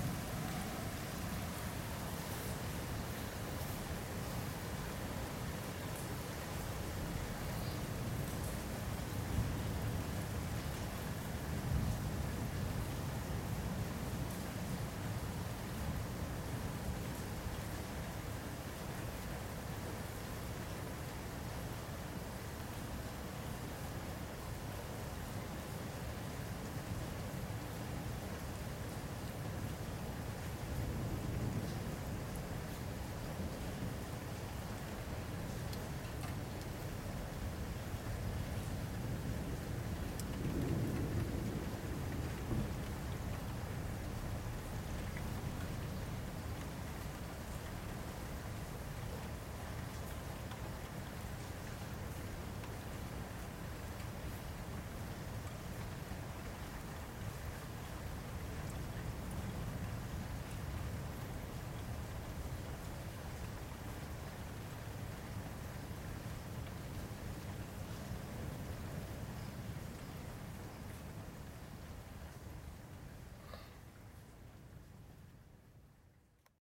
koeln, window, descending thunder & rain
rain during a thunderstorm.
recorded june 22nd, 2008.
project: "hasenbrot - a private sound diary"